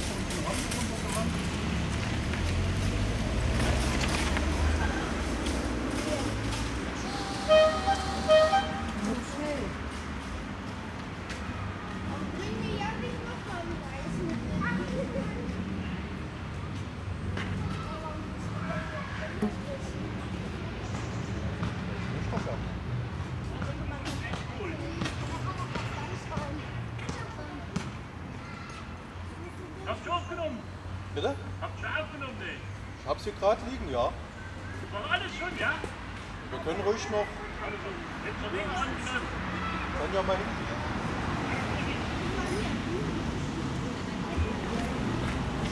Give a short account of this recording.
der eismann kommt zum big palais. stimmen, eismann, eismannbimmel, kunden.